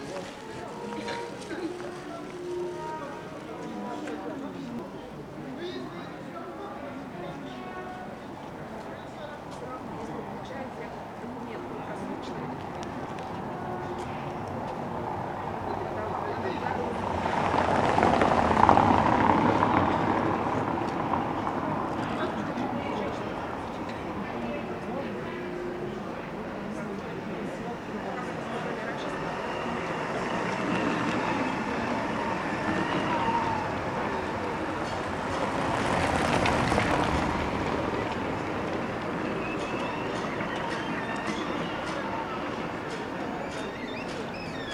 Tallinn, Lai 18
Quiet talks in a crowd when people, about a hundred of them, queue up at Lai street outside the Consulate of the Russian embasy. Door opens, one goes out, one goes in. Cars and segways are passing by, construction workers and music from an art galery complete the soundscape.